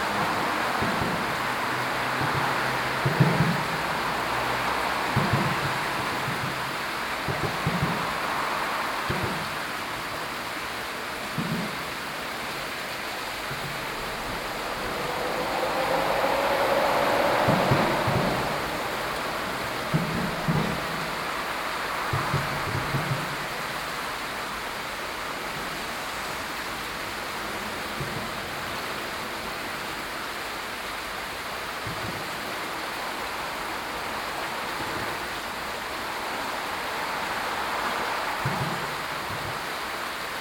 Under the highway next to the firth of the Bilina river.
Severozápad, Česko, 8 July, ~18:00